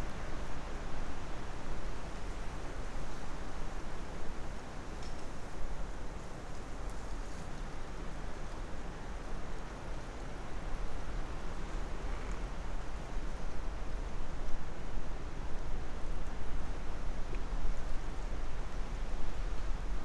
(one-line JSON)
{"title": "Lithuania, soundscape at the bunkers of lithuanian reistance partisans", "date": "2020-03-14 14:10:00", "description": "there was huge lithuanian partisans resistance against soviets in 1944-1945. people built underground bunkers to hide from and to fight with occupants. windy day at the remains of such bunker", "latitude": "55.55", "longitude": "24.21", "altitude": "64", "timezone": "Europe/Vilnius"}